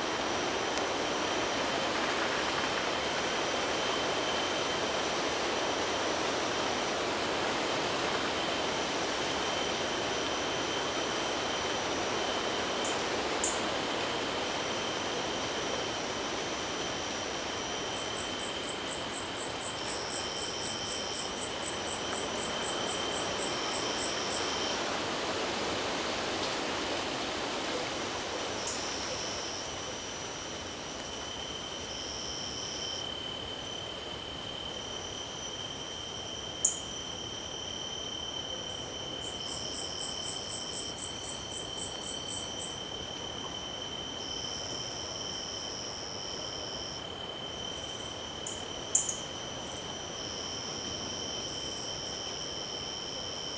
Pedra Bonira, Rio de Janeiro - Small wood at night close to Rio de Janeiro (on the way to Pedra Bonita)
On the way to Pedra Bonita, very close from Rio de Janeiro, the night is arriving, some crickets and a light wind in the trees (with some squeaking wood). A few birds sometimes. Recorded by a MS Setup Schoeps CCM41+CCM8 in Cinela Zephyx Windscreen. Recorder Sound Devices 633.